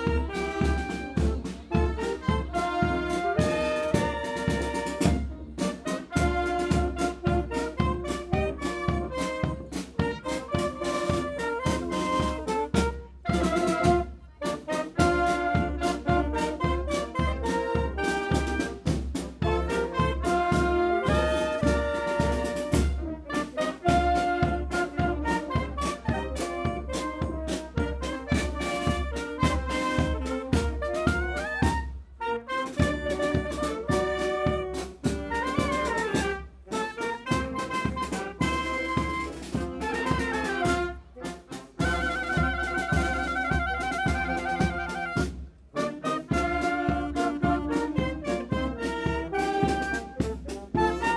Court-St.-Étienne, Belgique - Fanfare
During the annual feast of Court-St-Etienne, the local fanfare is playing, walking in the streets. This is called : La fanfare de Dongelberg.